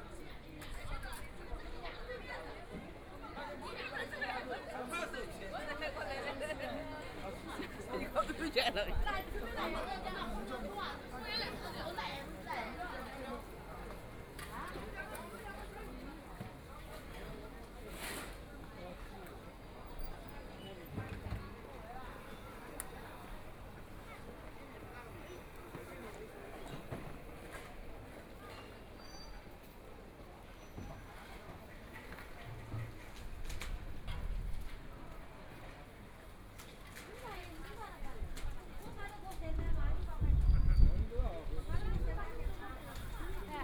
Guangqi Road, Shanghai - Walking in the street market
Walking in the street market, Binaural recording, Zoom H6+ Soundman OKM II
Shanghai, China